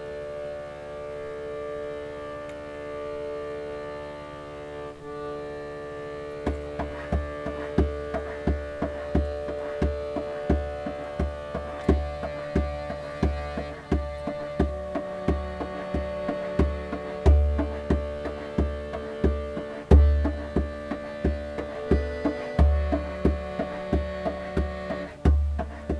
Zagreb, Hrvatska - Ispod mosta
Glazbenici Jurica Pačelat, Ivan Šaravanja i Vedran Živković sviraju uz lokalni soundscape parka Maksimir. Ideja je da tretiraju soundscape kao još jednog glazbenika i sviraju uz njega, ne imitirajući ga. Snimka je uploadana kao primjer za tekst diplomskog rada za studij Novih Medija na Akademiji likovnih umjetnosti sveučilišta u Zagrebu.Tin Dožić
Zagreb, Croatia